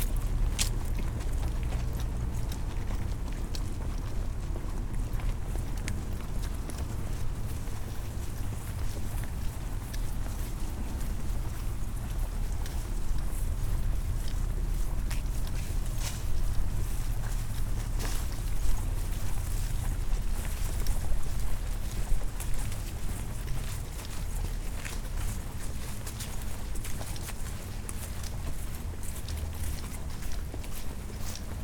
Montreal: Loyola Campus to Parc Loyola - Loyola Campus to Parc Loyola
equipment used: M-Audio Microtrack II
EAMT 399/E - class soundwalk